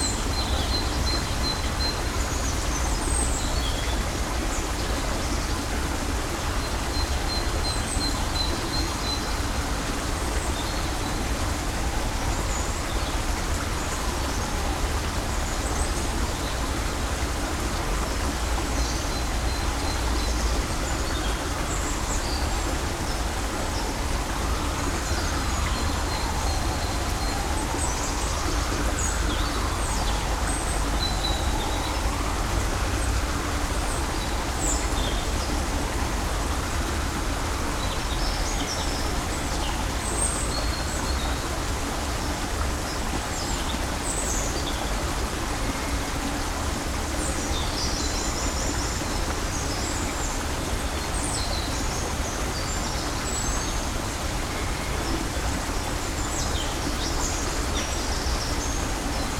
Brussels, Kinsendael natural reserve, along the Groelstbeek river
Sirens in the background.
SD-702, Me-64, NOS
Uccle, Belgium, 10 January 2012